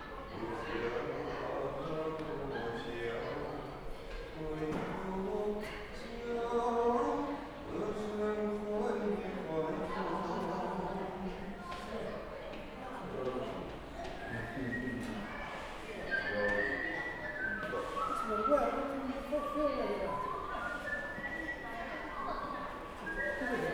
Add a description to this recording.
Man sing ancient song in the Imperor Secretary Gardens